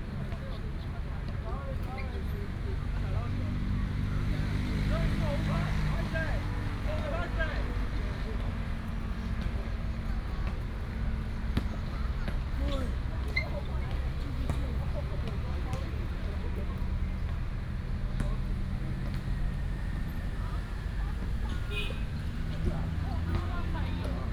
Play basketball, Traffic Sound, Very hot weather